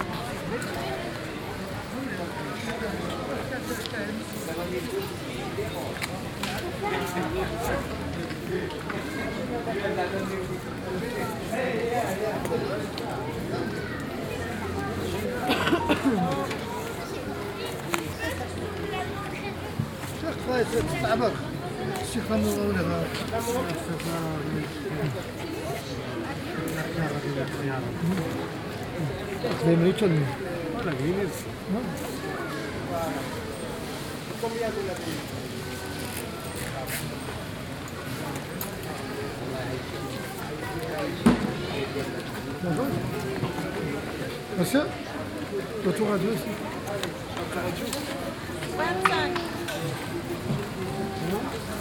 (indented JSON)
{
  "title": "Av. Capart, Jette, Belgique - Flea market ambience",
  "date": "2022-05-26 13:30:00",
  "description": "Ambiance brocante.\nTech Note : SP-TFB-2 binaural microphones → Olympus LS5, listen with headphones.",
  "latitude": "50.89",
  "longitude": "4.32",
  "altitude": "40",
  "timezone": "Europe/Brussels"
}